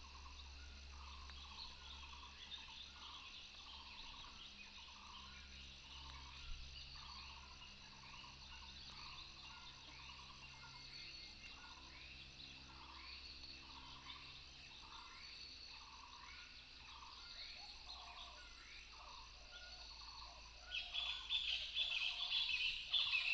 桃米巷, Puli Township - Birdsong
Birdsong, Chicken sounds
Nantou County, Puli Township, 桃米巷11號